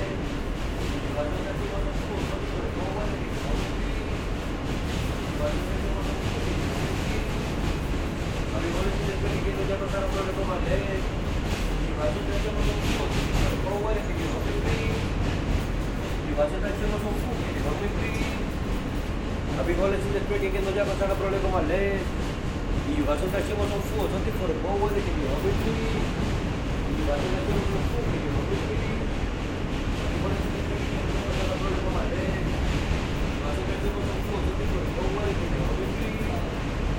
Wythe Av/S 5 St, Brooklyn, NY, USA - Returning Home from Work during Covid-19
Returning home from work during Covid-19.
Sounds of the M train, mostly empty.
Zoom h6
Kings County, New York, United States of America, 26 March 2020, 14:15